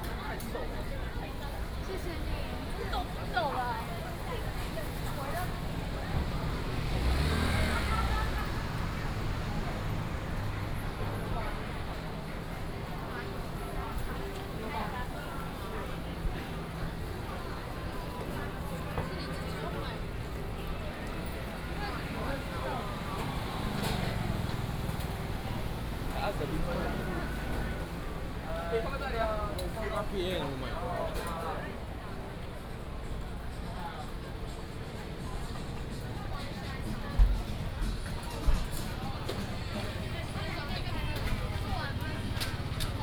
Longquan St., Da'an Dist. - walking in the Street
walking in the Street, Various shops